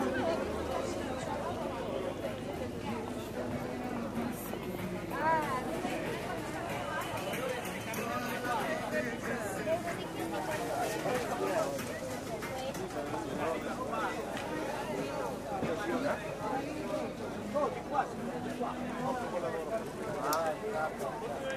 Roma, Porta Portese, sunday market
Sunday market at Porta Portese is basically divided into two sections that strech along two diverging streets. On the first, on Via Portuense, mainly new non-cotton clothes and other plastic products of ecologically doubtful origin are on offer. On the second, on Via degli Orti di Trastevere, there are wooden frames with and without faded photographs, rusty candelabres, and vestimentary leftovers of by-gone eras to be found. The recording was made on the first.